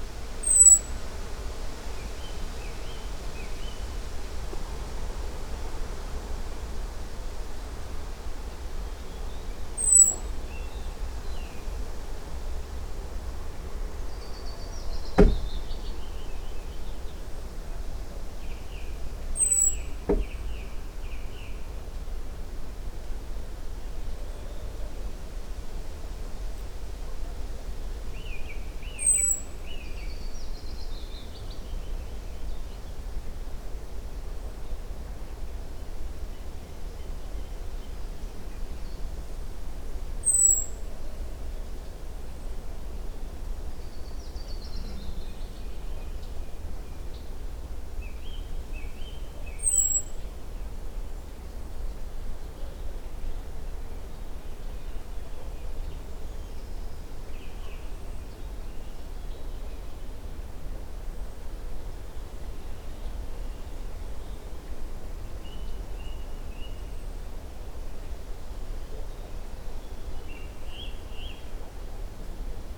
Sasino summer house - morning porch ambience
calm, sunny morning porch ambience in the summer house. (roland r-07)
powiat wejherowski, pomorskie, RP, June 2019